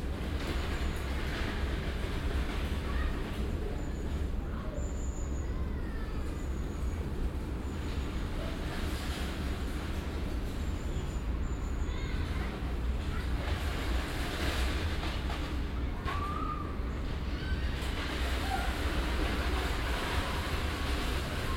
cologne, sued, kyllstrasse, züge und kindergarten - koeln, sued, kyllstrasse, züge und kindergarten 02
zugverkehr auf bahngleisen für güterverkehr und ICE betrieb nahe kindergarten, morgens
soundmap nrw: